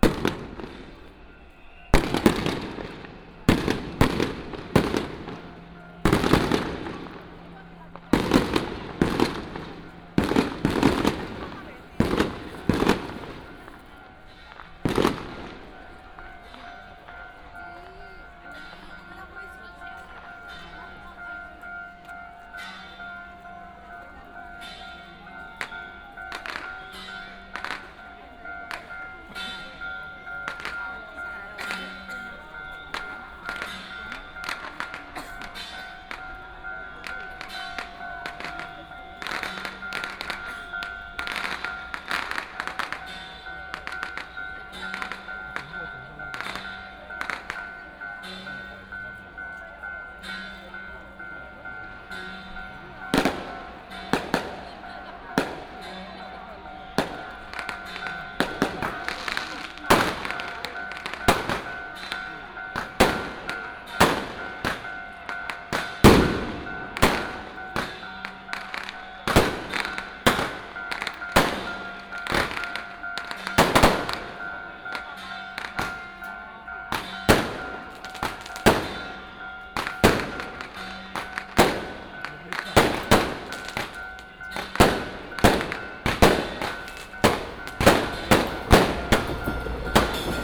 Baixi, 白沙屯, 通霄鎮 - In the railway level road
Matsu Pilgrimage Procession, Crowded crowd, Fireworks and firecrackers sound